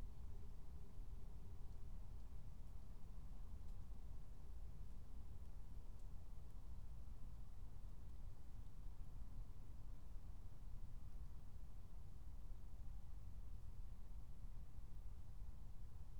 Berlin, Tempelhofer Feld - former shooting range, ambience
01:00 Berlin, Tempelhofer Feld
Deutschland